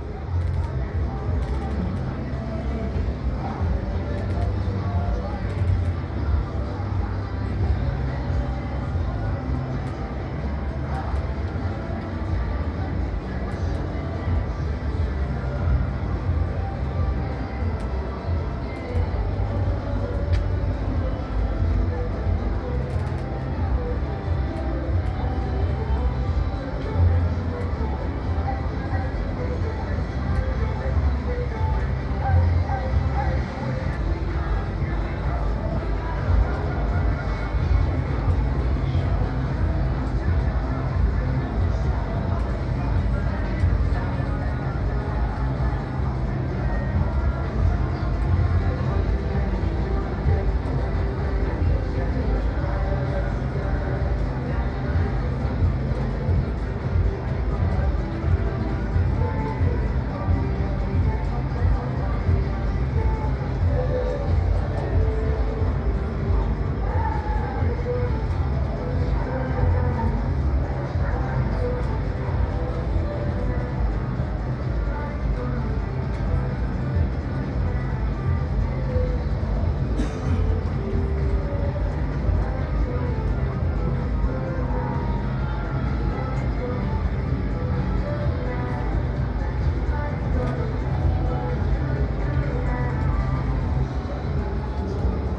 Marina Kalkan, Turkey - 914 distant parties
Distant recording of multiple parties happening in Kalkan city.
AB stereo recording (17cm) made with Sennheiser MKH 8020 on Sound Devices MixPre-6 II.